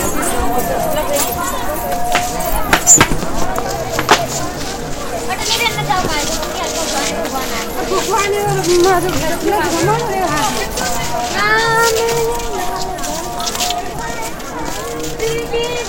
Pushkar, Rajasthan rec. by Sebcatlitte
Pushkar, Rajasthan, India